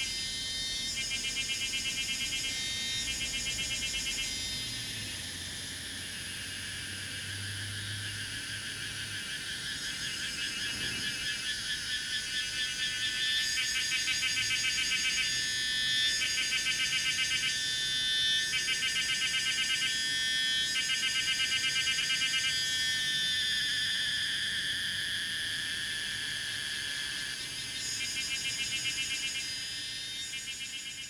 Lane 水上, Puli Township - Cicadas sound
Hot weather, Cicadas sound
Zoom H2n MS+XY